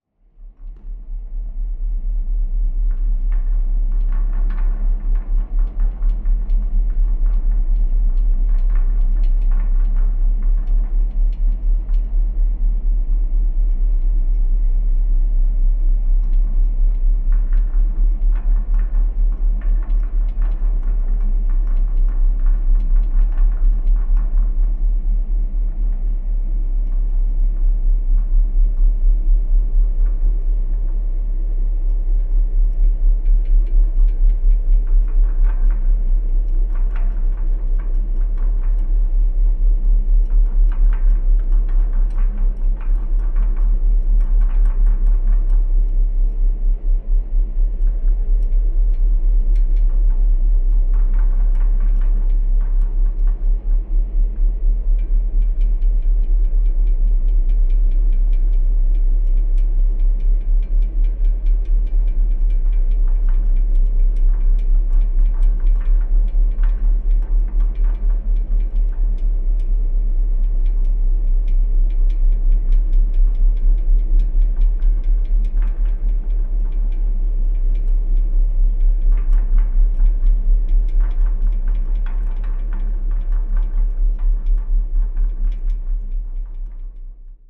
Sruth na Maoile, United Kingdom - Rattling Chains & Ferry Engine
Recorded with a pair of DPA 4060s and a Marantz PMD 661